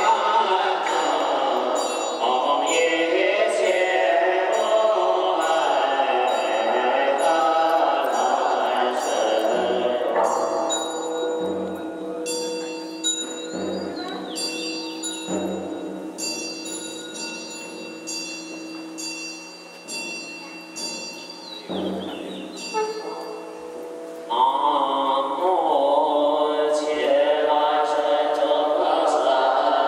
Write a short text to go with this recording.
Monks chanting in a temple in Xiangzhou, near Beijing Normal University Zhuhai (BNUZ).